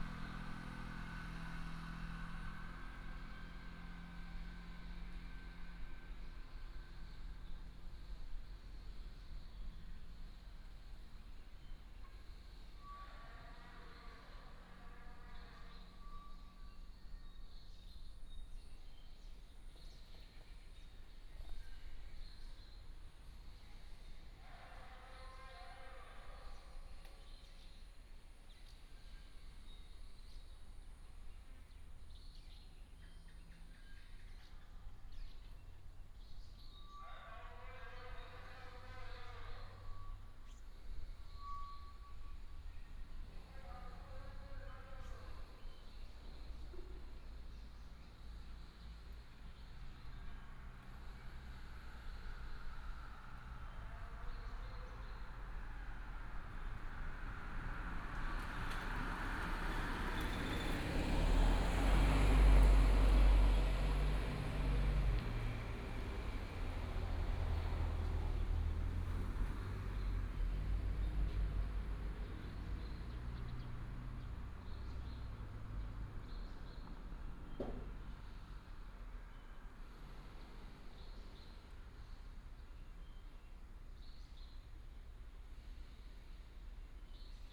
Anshuo Rd., Daren Township, Taitung County - in the morning
in the morning, Various bird tweets, traffic sound, Broadcast message sound, Chicken roar, Beside the school
Binaural recordings, Sony PCM D100+ Soundman OKM II